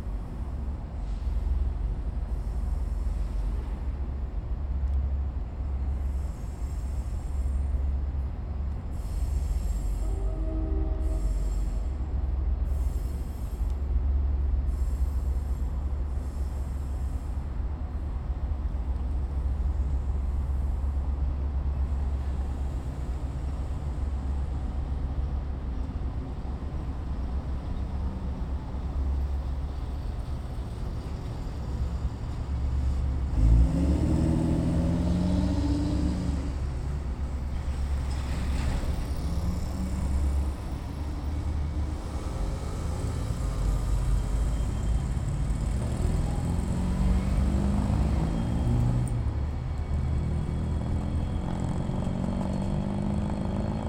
Kinzie St., Chicago IL - distant trains and traffic
IL, USA, 21 August 2009, 17:20